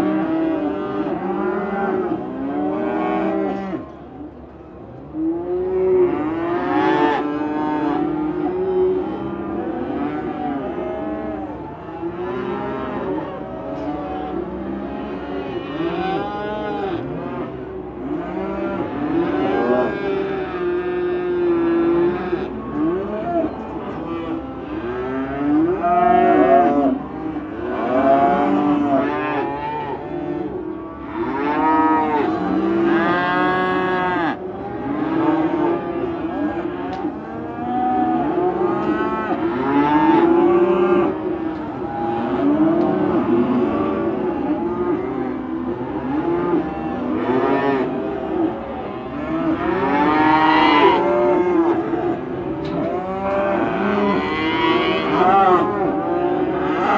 Cattle Market, St Joseph, MO, USA - Cows mooing in a stockyard in St Joseph, Missouri, USA.
Hundreds of cows mooing outside a cattle market, waiting in a stockyard for be sale and sent to some feedlot (for most of them). Sound recorded by a MS setup Schoeps CCM41+CCM8 Sound Devices 788T recorder with CL8 MS is encoded in STEREO Left-Right recorded in may 2013 in St Joseph, Missouri, USA.